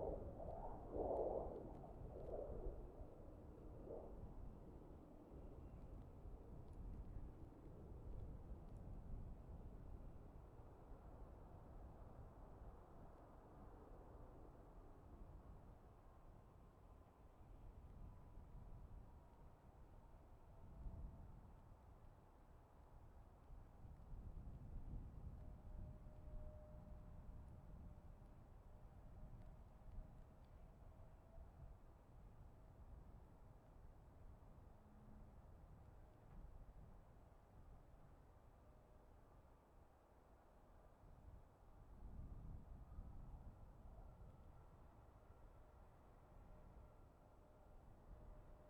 MSP Spotters Park - MSP 30L Operations from Spotters Park
Landings and takeoffs on Runway 30L at Minneapolis/St Paul International Airport recorded from the Spotters Park.
Recorded using Zoom H5
Hennepin County, Minnesota, United States, 16 February, 15:15